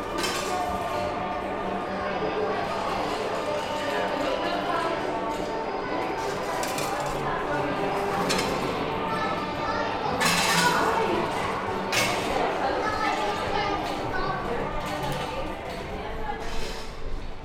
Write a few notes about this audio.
Soundwalk on Hastings lovely 'new' minimalist pier, inside the arcades and out onto the Pier itself. Recorded with a Marantz PMD661 with Lom Usi Pro mics. Mounted inside a backpack with mics poking through top with a 'dead kitten' style wind cover for stealth purposes. Bank Holiday Weekend, 2019